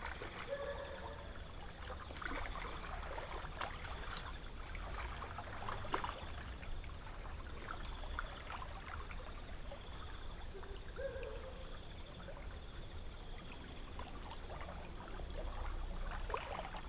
Lake shore, Kariba Lake, Sinazongwe, Zambia - listening to a full lunar eclipse over the lake....
Southern Province, Zambia, July 2018